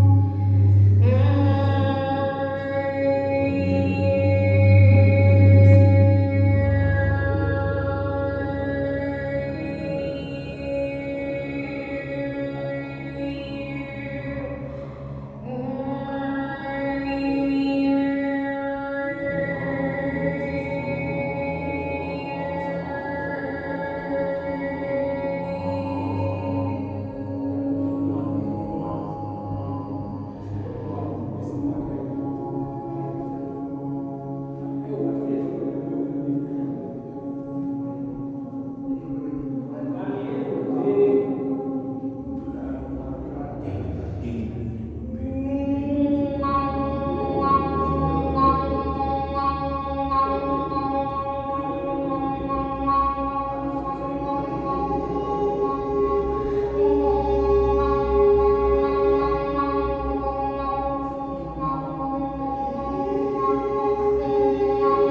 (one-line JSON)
{"title": "Bohicon, Bénin - village souterrain d'Agongointo-Zoungoudo", "date": "2016-11-08 13:33:00", "description": "concert dans le village souterrain d'agogointo", "latitude": "7.18", "longitude": "2.07", "altitude": "165", "timezone": "GMT+1"}